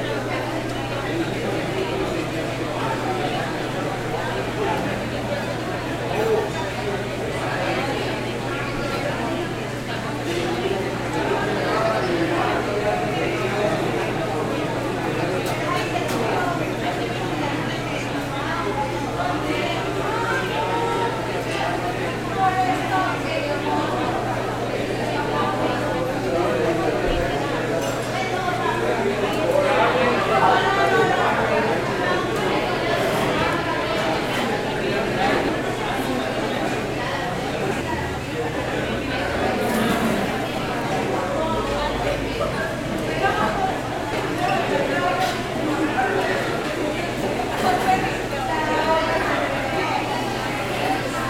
Cl., Medellín, Antioquia, Colombia - Ambiente Zona Comidas Facultad Ingenierias | wallas
Descripción: Zona de comidas de la Facultad de Ingenieras de la Universidad de Medellín.
Sonido tónico: personas hablando, platos, cubiertos y sillas siendo arrastradas.
Señal sonora: avión pasando y fuente.
Técnica: Zoom H6 & XY
Alejandra Flórez, Alejandra Giraldo, Mariantonia Mejía, Miguel Cartagena, Santiago Madera.